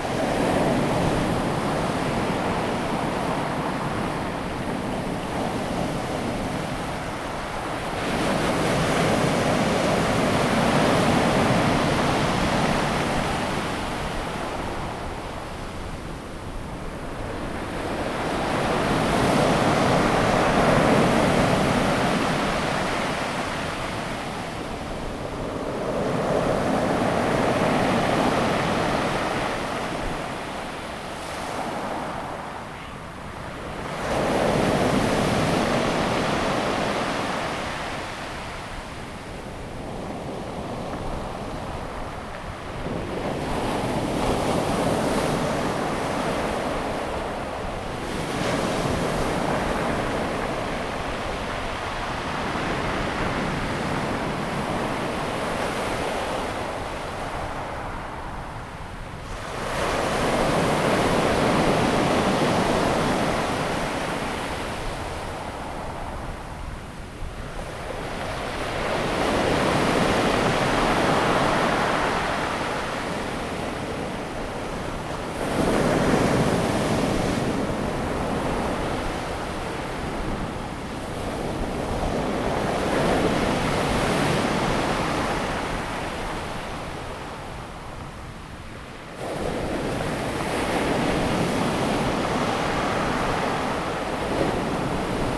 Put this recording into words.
At the beach, Sound of the waves, Near the waves, Zoom H2n MS+XY